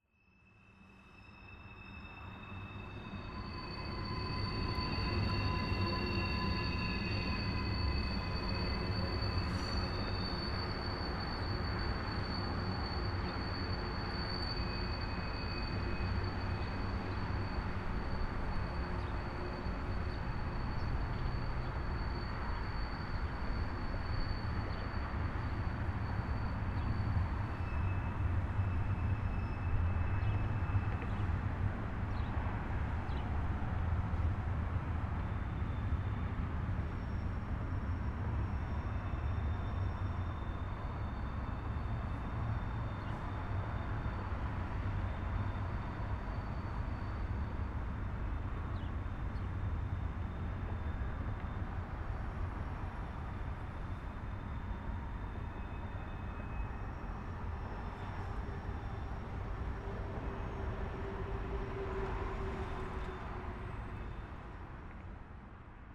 Bolton Hill, Baltimore, MD, 美国 - Train pulling in harmony
Train pulling in behind Fox building
Danielle Hou